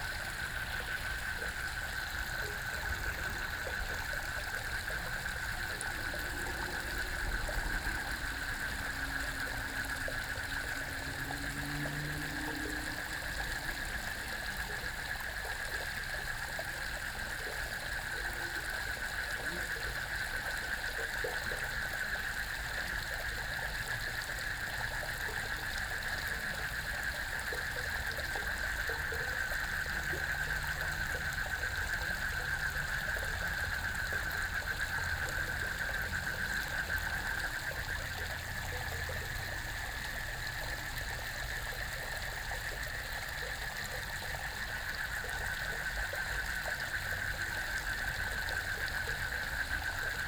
桃米紙教堂, 南投縣埔里鎮桃米里, Taiwan - Frogs and Flow sound
Frogs chirping, Flow sound, Traffic Sound